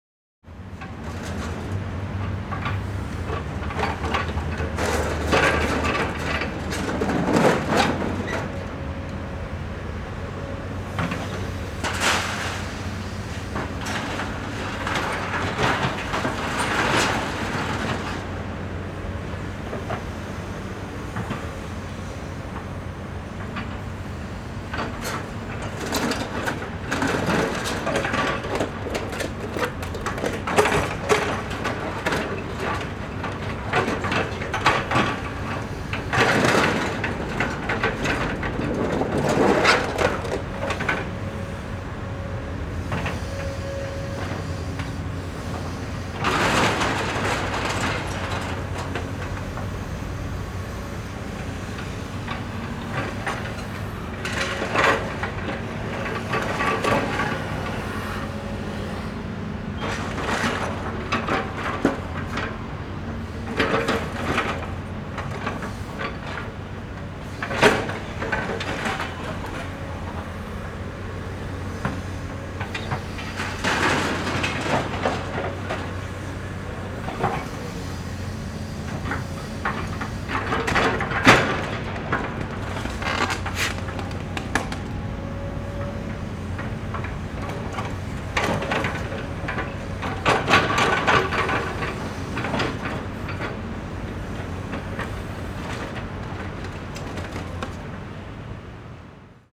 Sec., Huanhe W. Rd., Banqiao Dist., New Taipei City - The construction site
The construction site, Traffic Sound
Zoom H4n +Rode NT4